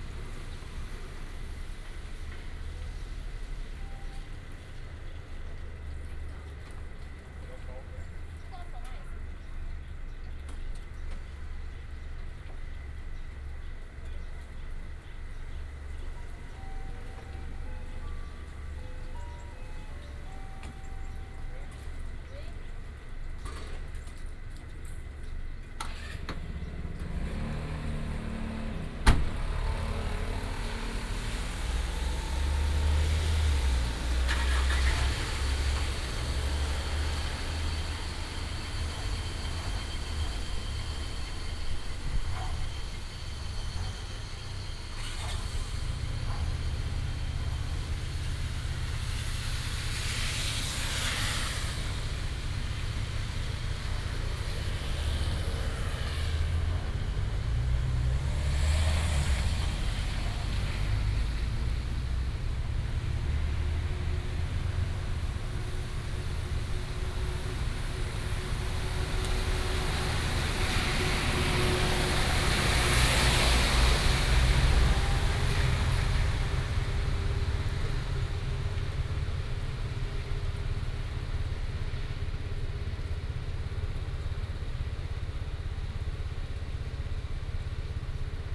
全家便利商店鹿港鹿鼎店, Lukang Township - Outside the store
Outside the store, Road corner, Traffic sound, rain
Binaural recordings, Sony PCM D100+ Soundman OKM II
Lukang Township, 彰22鄉道2號, 19 June 2018